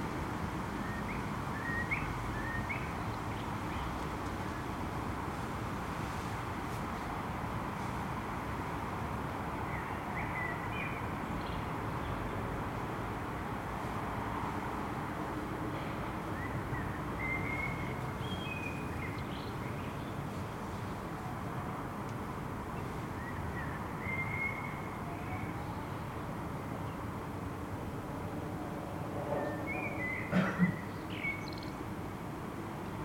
Rue de lEtoile, Uccle, Belgique - cars are back 2
cars are back and corona is not finished
14 April, ~11:00